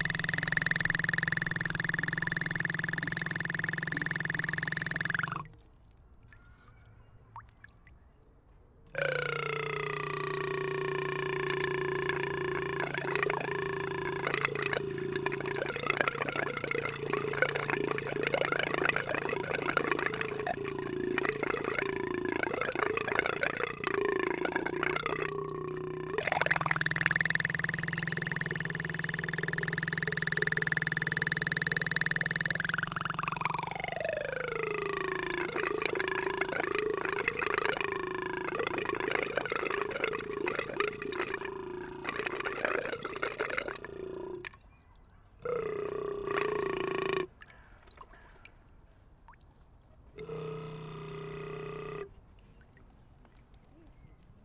København, Denmark - Crazy water tap
A water tap is speaking to us, talking with incredible words everytime we want to drink. We play with it during five minutes. Some passers are laughing with the sound.